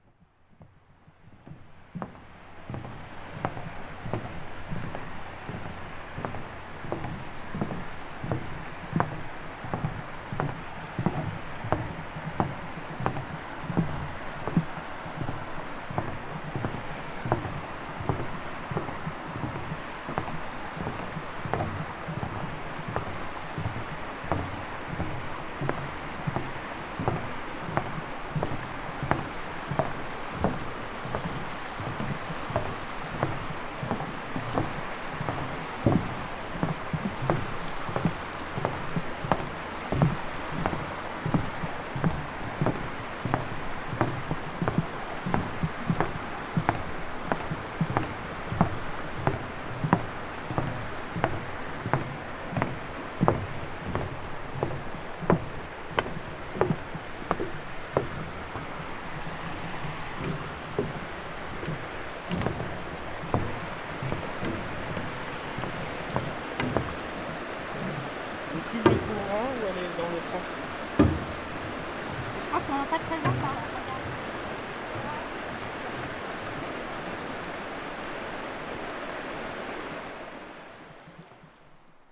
Chaos du Chéran, Sent. de la Passerelle, Cusy, France - Pas passerelle
Traversée de la passerelle du Chéran à Cusy, chaussures de vélo aux pieds.
Auvergne-Rhône-Alpes, France métropolitaine, France, August 2022